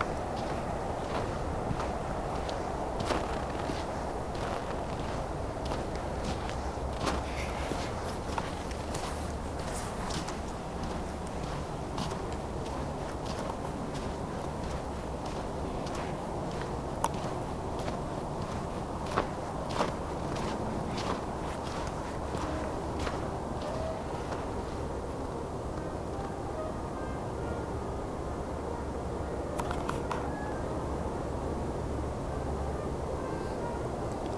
in the night. steps on the stoney footway
a rehearsal of a traditional brass ensemble recorded thru a window outside in the cold winter
soundmap nrw - social ambiences and topographic field recordings
hellweg, bretenbachgelände